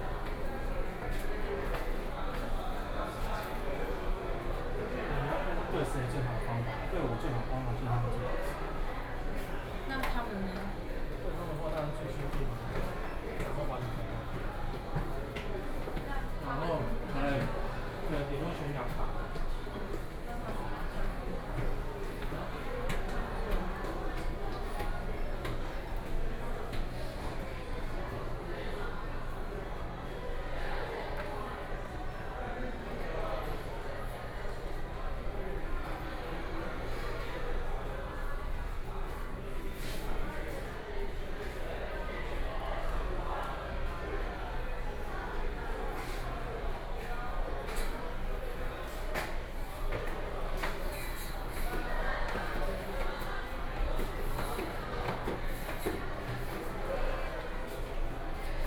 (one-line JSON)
{"title": "Eslite Bookstore, Sec., Xinsheng S. Rd. - Stairwell", "date": "2013-08-07 20:06:00", "description": "inside the Bookstore, Stairwell, Sony PCM D50 + Soundman OKM II", "latitude": "25.02", "longitude": "121.53", "altitude": "19", "timezone": "Asia/Taipei"}